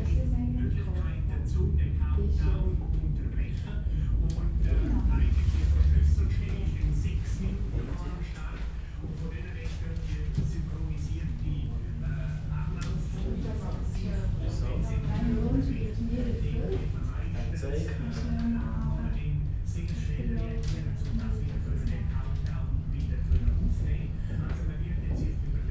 In der Seilbahn nach der Lauchernalp
In der Seilbahn, so modern dass nichts mehr tönt, wir sind begleitet und fahren hoch auf die Alp. die Gespräche sind nicht über das Sehen und die fernen und doch so nahen Berge im Wallis, alles 3000er
8 July 2011, ~18:00